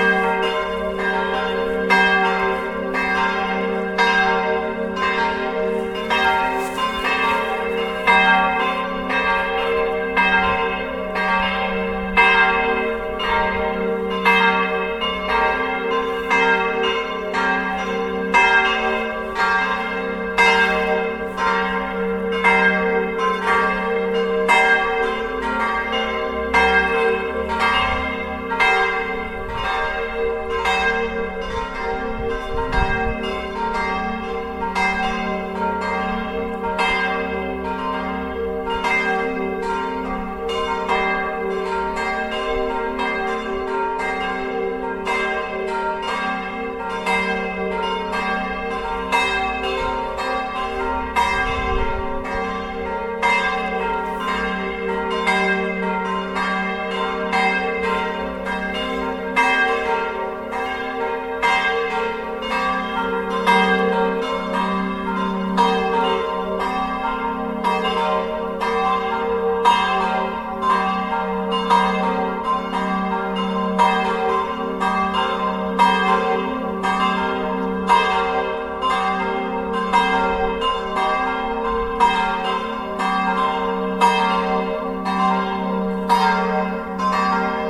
Jakobuskirche, Pelkum, Hamm, Germany - The evening bells...
… the bells are ringing for an evening prayer in lent… I listen into the passing resonances… it takes more than three minutes for the bells to sound out… the changing sounds and melodies are amazing… then I go into the old prayer room, one of the oldest in the city…
…die Glocken rufen zu einem Abendgebet in der Fastenzeit… ich höre zu bis sie ganz verklungen sind… mehr als drei Minuten dauert es für die Glocken, zum Stillstand zu kommen… das Ausklingen erzeugt ganz erstaunliche Klänge und Melodien… dann geh ich in den alten Betraum, einen der ältesten der Stadt…
1 March 2015